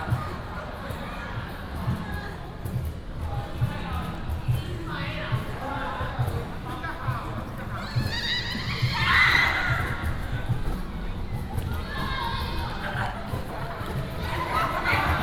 Zhongzheng Park, Zhongli Dist. - game
Many high school students, game, High school student music association